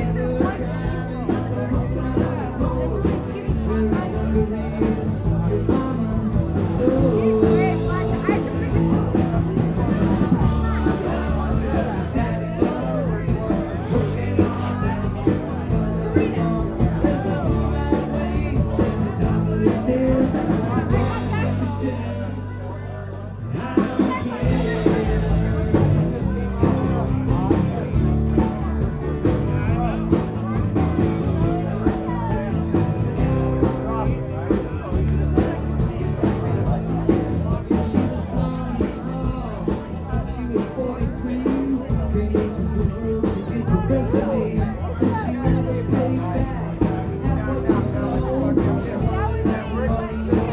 neoscenes: at the Buffalo Rose
CO, USA